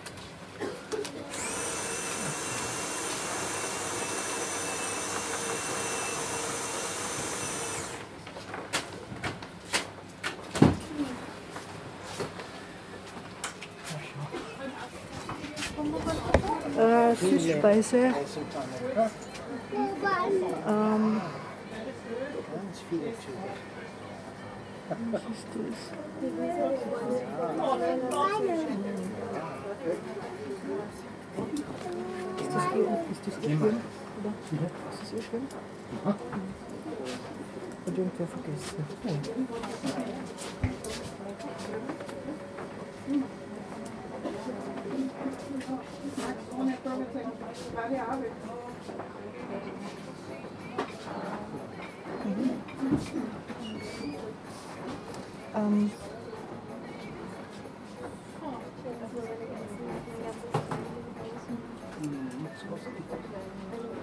Siller-Straße, Strasshof an der Nordbahn, Österreich - Railway Buffet
Eisenbahnmuseum Strasshof : Buffet in historical railway wagon